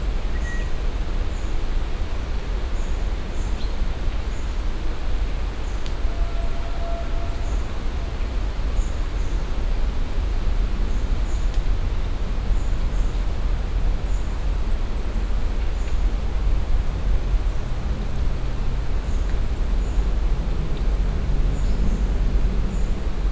Radajewo, bike path along Warta river - forest abmience
(binaural recording) summer ambience in the forest near Radajewo village. (roland r-07 + luhd PM-01 bins)
wielkopolskie, Polska, August 2019